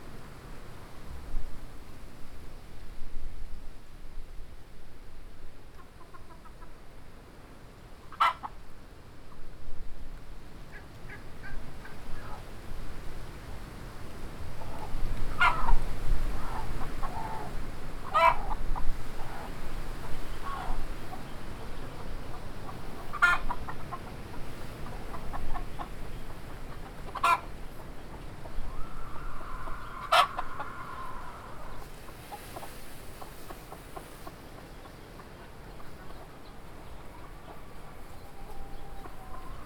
Harmony farm, Choma, Zambia - midday sounds around the farm
heavy mid-day breeze in the large trees... and farm life in motion...
Southern Province, Zambia, 12 July 2016, ~13:00